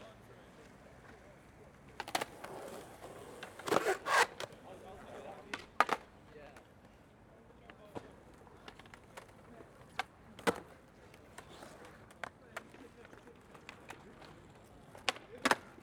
Rose Walk, The Level, Brighton, Vereinigtes Königreich - Brighton - the Level - Skater Park
In Brighton at the Level - a public skater park - the sounds of skating
soundmap international:
social ambiences, topographic field recordings
South East England, England, United Kingdom, 18 March 2022